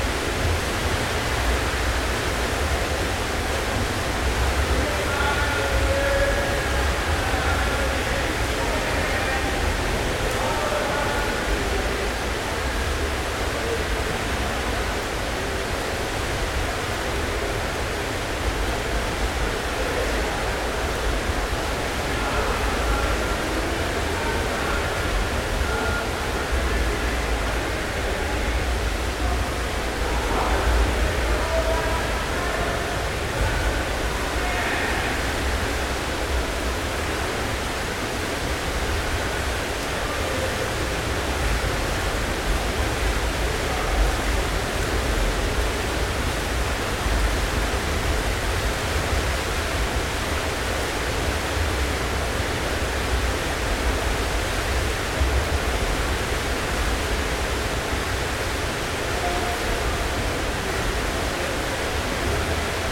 general ambient noise in the arena with two large pools.